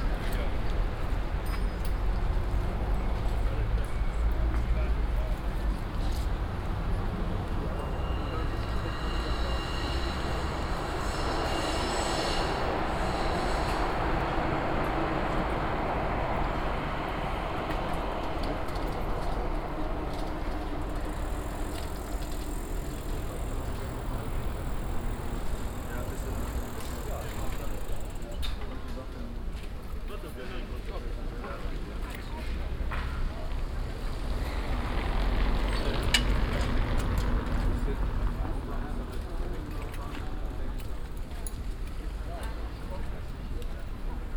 cologne, neusserstrasse, agneskirche, platz - cologne, agneskirche, fahrradmarkt
samstäglicher fahrradmarkt auf platz vor der kirche, morgens - durch lüftungsgitter durchfahrt der u-bahn
soundmap nrw: social ambiences/ listen to the people - in & outdoor nearfield recordings, listen to the people